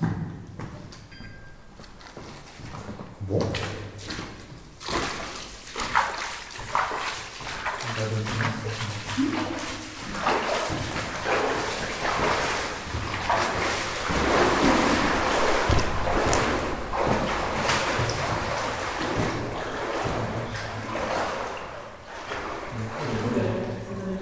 Ottange, France - The boat
Using a boat in a flooded underground mine. A friend is going naked in a 11°C water, level is 1,50 meter high, he's searching a boat docked 200 meters more far.
25 October